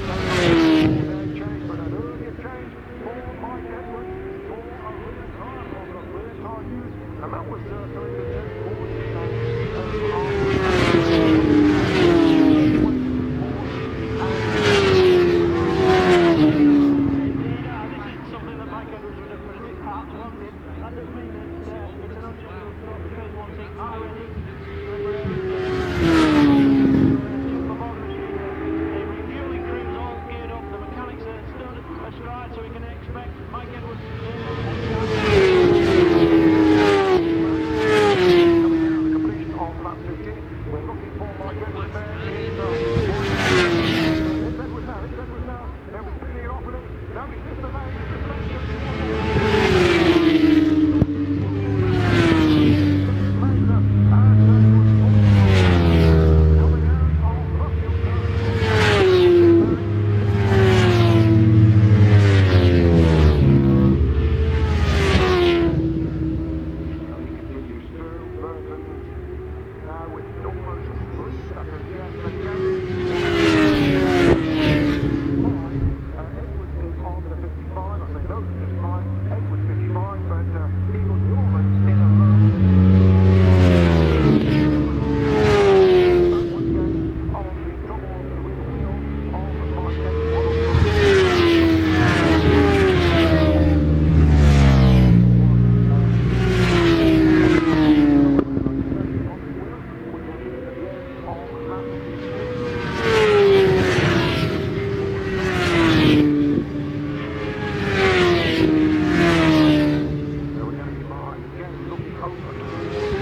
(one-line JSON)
{"title": "Silverstone Circuit, Towcester, United Kingdom - world endurance championship 2002 ... race ...", "date": "2002-05-19 14:00:00", "description": "fim world endurance championship ... the silverstone 200 ... one point stereo mic to minidisk ... some commentary ... bit of a shambles ... poorly attended ... organisation was not good ... the stands opposite the racing garages were shut ... so the excitement of the le mans start ... the run across the track to start the bikes ... the pit action as the bikes came in ... all lost ... a first ... and the last ...", "latitude": "52.07", "longitude": "-1.02", "altitude": "152", "timezone": "Europe/London"}